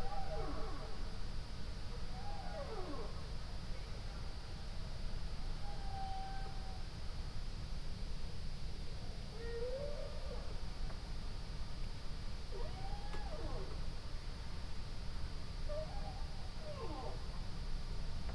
Ascolto il tuo cuore, città. I listen to your heart, city. Several chapters **SCROLL DOWN FOR ALL RECORDINGS** - Round midnight song of the whales in the background in the time of COVID19: soundscape.
"Round midnight song of the whales in the background in the time of COVID19": soundscape.
Chapter CXLIV of Ascolto il tuo cuore, città. I listen to your heart, city
Wednesday November 11th 2020. Fixed position on an internal terrace at San Salvario district Turin, almost three weeks of new restrictive disposition due to the epidemic of COVID19.
On the terrace I diffused the CD: “Relax with Song of the whales”
Start at 11:51 p.m. end at 00:13 a.m. duration of recording 22’29”